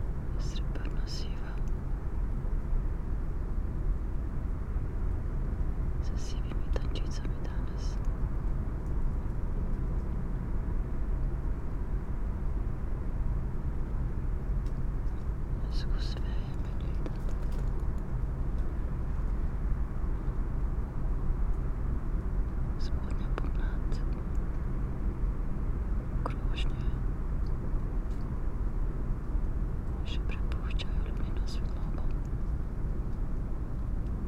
tree crown poems, Piramida - lunar
partial lunar eclipse, full moon, whisperings and spoken words, traffic hum
Maribor, Slovenia, 25 April 2013